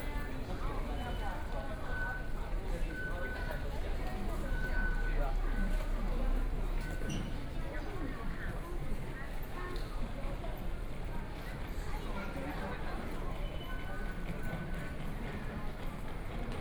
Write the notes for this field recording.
Walking into the Station, Sony PCM D50+ Soundman OKM II